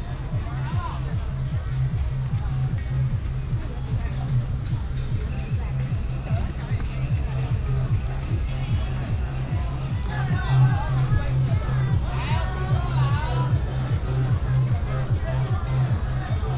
Shatian Rd., Shalu Dist. - Matsu Pilgrimage Procession
Matsu Pilgrimage Procession, A lot of people, Directing traffic, Whistle sound, Footsteps
Taichung City, Taiwan, 27 February 2017, ~11:00